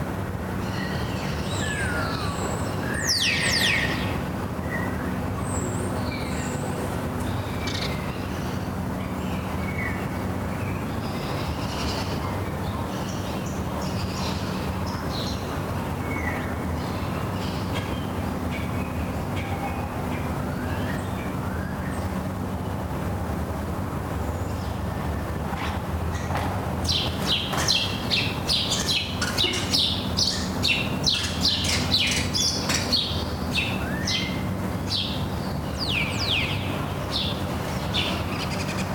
Les Jardins de Carthage - Sidi Daoud, Tunis, Tunisie - Morning birds
birds, dog, rooster
Tunisia